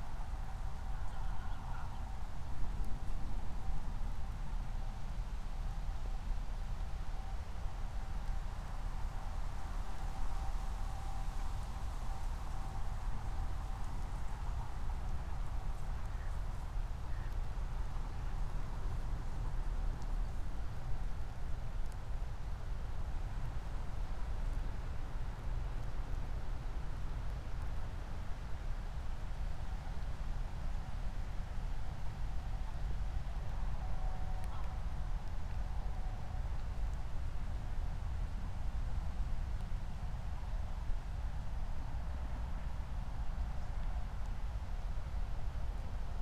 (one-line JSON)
{"title": "Moorlinse, Berlin Buch - near the pond, ambience", "date": "2020-12-24 05:19:00", "description": "05:19 Moorlinse, Berlin Buch", "latitude": "52.64", "longitude": "13.49", "altitude": "50", "timezone": "Europe/Berlin"}